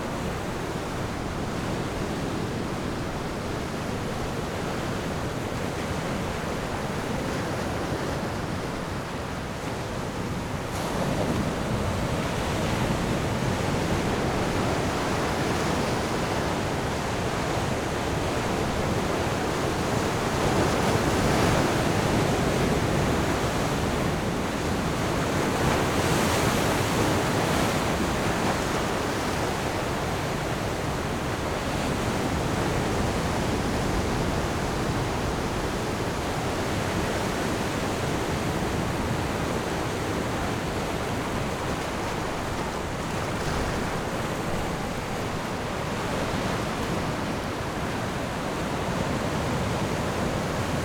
On the coast, sound of the waves
Zoom H6 +Rode NT4
Taitung County, Taiwan, 29 October 2014, 12:23